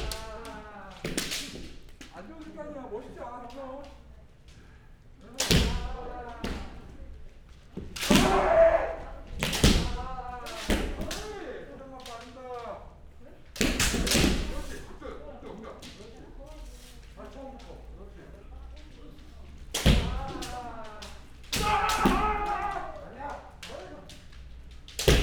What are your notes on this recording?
검도관_with bamboo swords_Kendo Dojo